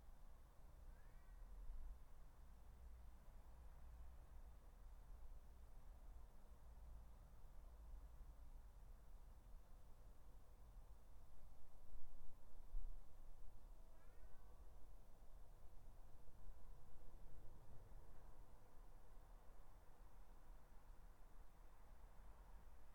Dorridge, West Midlands, UK - Garden 17
3 minute recording of my back garden recorded on a Yamaha Pocketrak
Solihull, UK, 13 August 2013, 19:00